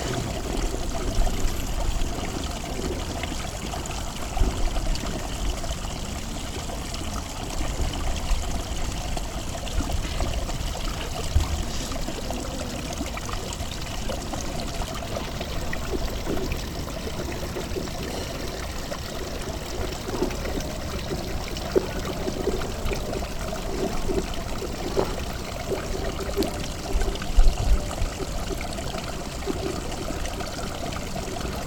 Praha-Dolní Počernice, Česká republika - sparrows, iceskaters
Flock of birds feeding in trees near the lake in Dolní Počernice, (first I thougt Bohemian Waxwings, but they were sparrows), little stream and several lonely ice skaters. Last day of the frost period.
Prague-Praha-Dolní Počernice, Czech Republic, 17 January 2013, 15:24